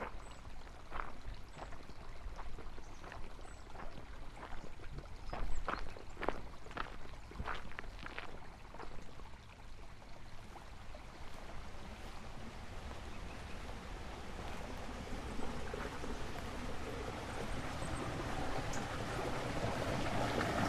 Drée, France - Point d'Ouïe 1
Inauguration du 1er point d'ouïe mondial - Soundwalk - 18/07/2015 - #WLD2015
18 July 2015